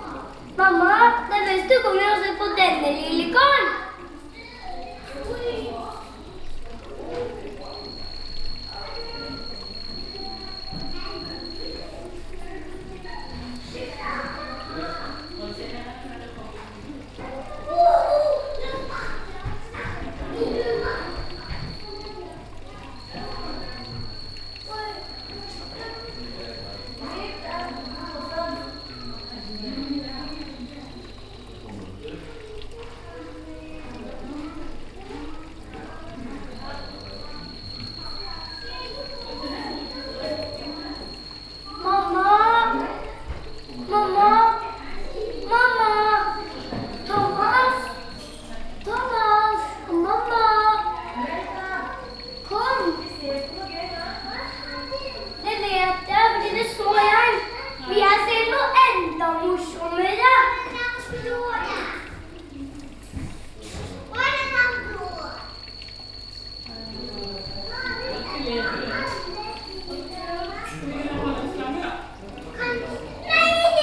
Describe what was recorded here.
Norway, Oslo, reptiles, water, children, binaural